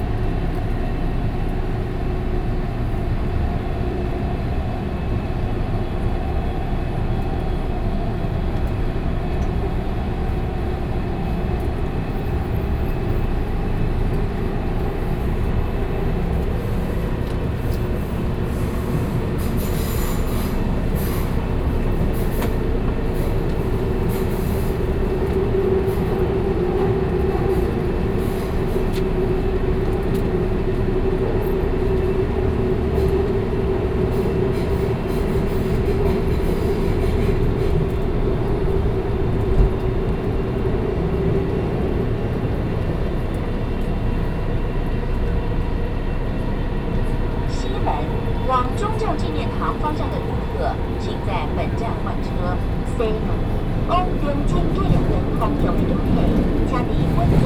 Zhongzheng District, Taipei, Taiwan - In the MRT